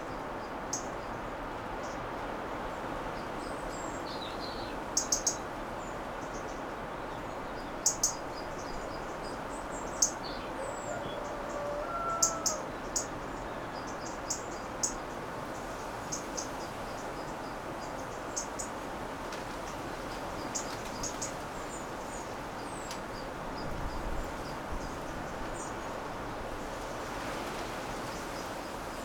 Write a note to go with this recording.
early autumn morning in ginostra, stromboli. i missed the donkeys.